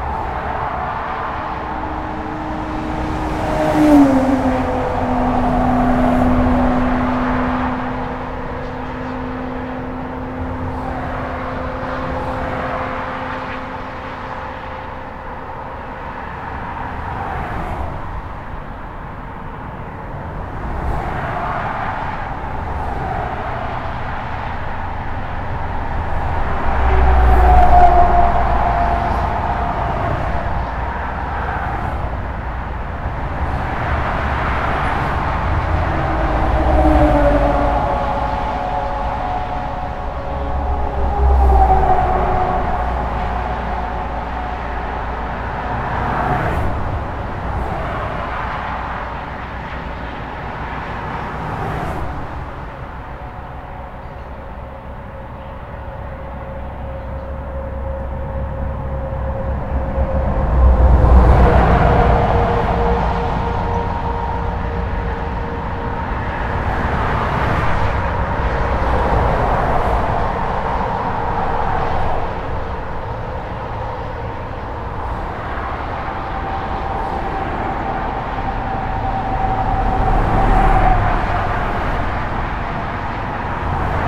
Court-St.-Étienne, Belgique - N25 au Chenoy
A dense trafic on the local highway, called N25.